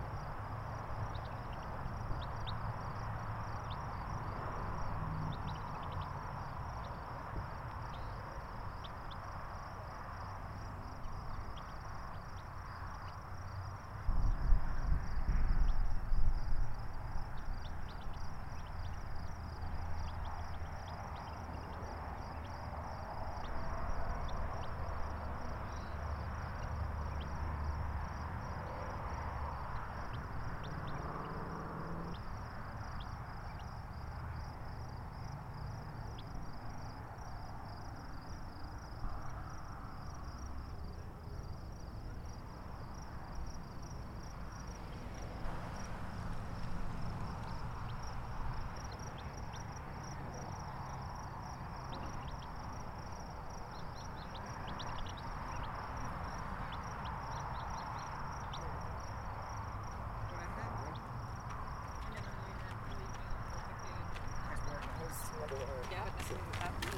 Red Rock Canyon Trail, Colorado Springs, CO, USA - RedRocksOpenSpaceNearMainEntrance13May2018
Soundscape includes cars, insects, birds chirping, and people walking/ talking.